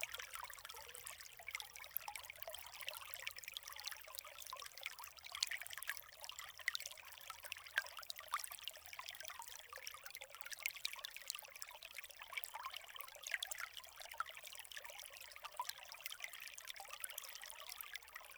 Saint-Genouph, France - One hour near the Loire river
The Loire river is a well known place, considering that there's a lot of touristical places : old castles, the beautiful weather and the overall beauty of its natural sites. This makes a good presage for a soundscape. However this recording was difficult to achieve. Indeed, on the Tours city outskirts, Loire river is extremely quiet, it's a lake without waves. In addition, important roads border the banks. Because of the cars, to record near an island is almost mandatory. Luckily, I was able to find the perfect place in Saint-Genouph village : beautiful, calm and representative of the river.
La Loire est un fleuve très connu du grand public, étant donné les symboles qu'il véhicule : la présence des châteaux, le beau temps, la beauté générale de ses sites naturels. Cela fait de jolis atouts en vue de la constitution d'un paysage sonore. Pourtant cet enregistrement s'est avéré difficile à réaliser. En effet aux abords de Tours, la Loire est extrêmement calme.